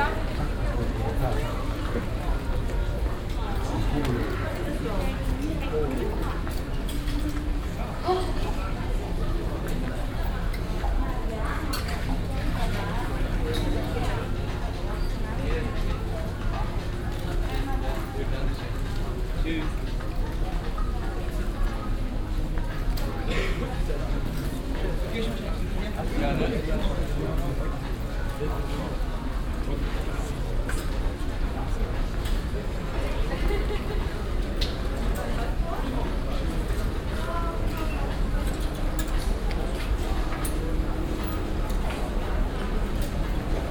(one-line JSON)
{"title": "cologne, weltstadthaus, p+c kaufhaus", "date": "2008-08-02 15:22:00", "description": "shopper im glas und stahlambiente des als weltstadthaus bezeichneten p+c warenhauses des Architekten Renzo Piano, nachmittags\nsoundmap nrw: social ambiences/ listen to the people - in & outdoor nearfield recordings", "latitude": "50.94", "longitude": "6.95", "altitude": "55", "timezone": "Europe/Berlin"}